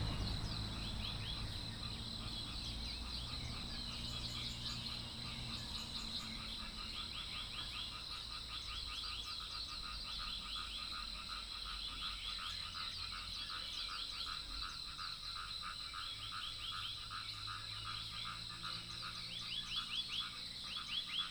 種瓜路4-2號, Puli Township - Early morning
Birdsong, Chicken sounds, Frogs chirping, Early morning
Puli Township, Nantou County, Taiwan, 11 June 2015